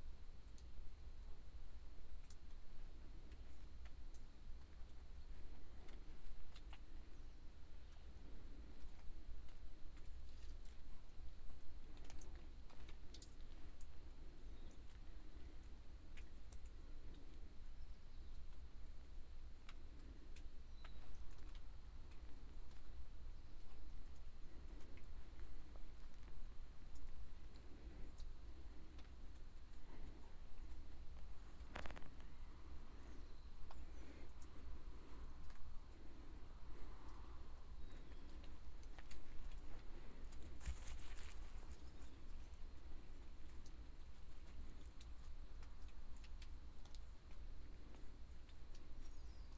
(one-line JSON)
{"title": "“Sunsetsound 2020, Levice” a soundwalk in four movements: September 5th & December 21st 2020. SCROLL DOWN FOR MORE INFOS - “Sunsetsound 2020, Levice” a soundwalk in four movements: fourth movement.", "date": "2020-12-21 10:07:00", "description": "“Sunsetsound 2020, Levice” a soundwalk in four movements.\nSoundwalk in four movements realized in the frame of the project Sunsetsound.\nMovements 1 & 2: Levice, CN, Italy, Saturday, September 5th, 2020:\nFirst movements: start at 5:23 p.m. end at 6:01 p.m. duration 35’29”\nSecond movement: start at 6:33 p.m. end at 7:21 p.m. duration 48’02”\nTotal duration of recording: 01:23:05\nMovement 3&4: same path as Movements 1&2, Monday December 21st at Winter solstice (for this place solstice will happen at 10:02 a.am.).\nThird movement: start at 9:11 end at 10:06, total duration 55’ 13”\nFourth movement: start at 10:07 end at 11:03, total duration 55’ 39”\nAs binaural recording is suggested headphones listening.\nAll paths are associated with synchronized GPS track recorded in the (kmz, kml, gpx) files downloadable here:\nfirst path/movement:\nsecond path/movement:\nthird& fourth path/movement:", "latitude": "44.54", "longitude": "8.16", "altitude": "546", "timezone": "Europe/Rome"}